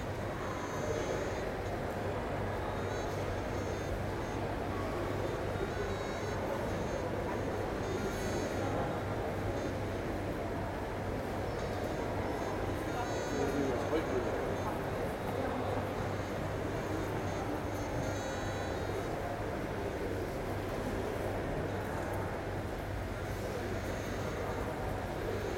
mannheim main station, hall
recorded june 29th, 2008.
part 1 of recording.
project: "hasenbrot - a private sound diary"